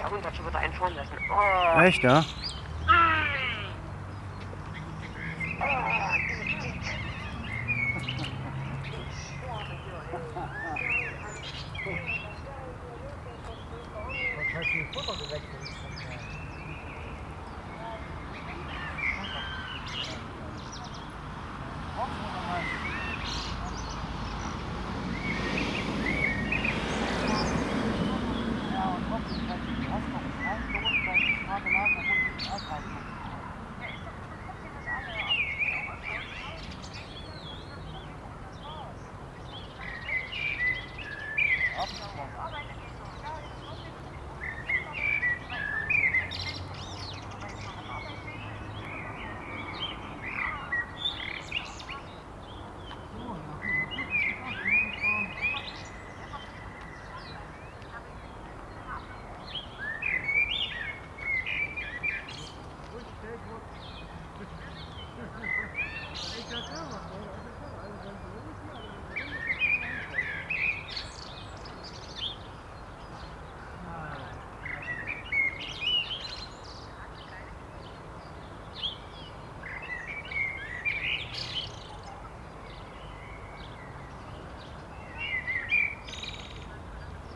Parkstraße, Bad Berka, Deutschland - A blackbird in Spring 2021
A binaural recording. Headphones recommended for best listening experience.
At a Klinikum where a blackbird was taped in its full acoustic element.
Recording technology: Soundman OKM, Zoom F4.
Thüringen, Deutschland